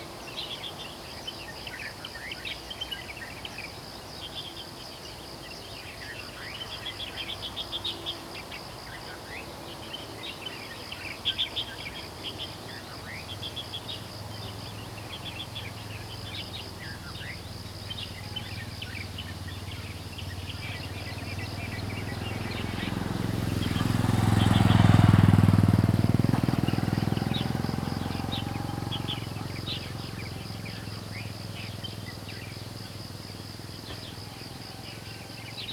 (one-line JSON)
{"title": "桃米巷, 南投縣埔里鎮桃米里 - In the morning", "date": "2015-10-07 05:56:00", "description": "Birds sound, In the morning\nZoom H2n MS+XY", "latitude": "23.94", "longitude": "120.94", "altitude": "455", "timezone": "Asia/Taipei"}